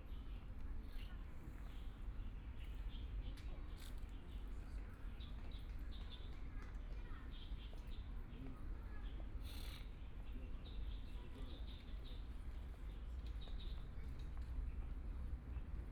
Yangpu Park, Shanghai - Sitting in the square
Sitting in the square, A group of people who are eating and playing cards, Binaural recording, Zoom H6+ Soundman OKM II